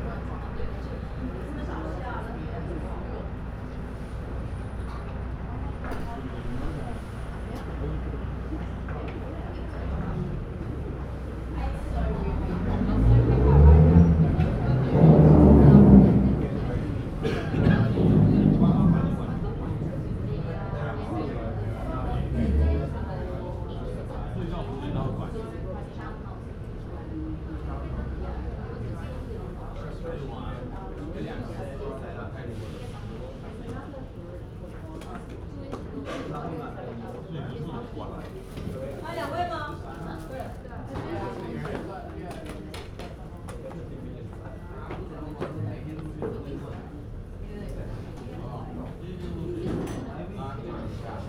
Haymarket NSW, Australia - Chinese dumpling restaurant
Sitting down to a lunch of some dumplings. Recorded with Olympus LS-12
13 May 2015, ~15:00